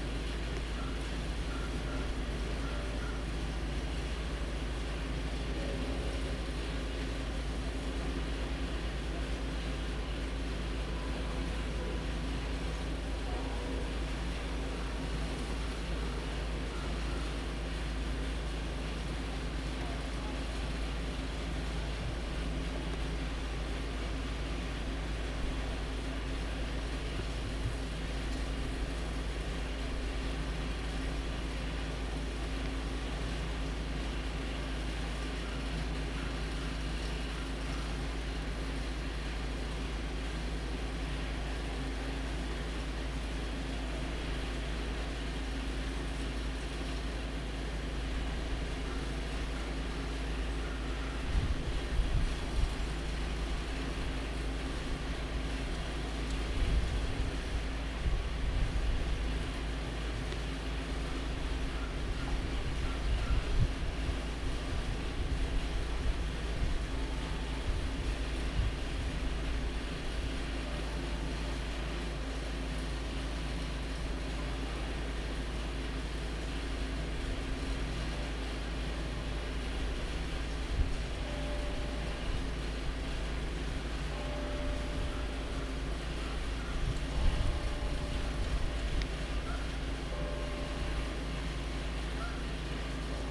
The temperature was just above freezing and the sun cracked through the clouds. The sounds of traffic, construction, and the noon bell of the Haas building are present.